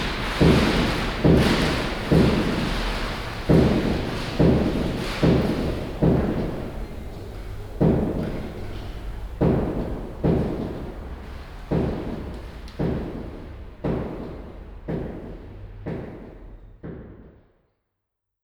Inside the old post office. The general atmosphere of the big and high, nearly empty cental room. The sound of the wooden swing door, an electronic machine, people talking and the sound of a post office worker stamping envelopes.
soundmap Cluj- topographic field recordings and social ambiences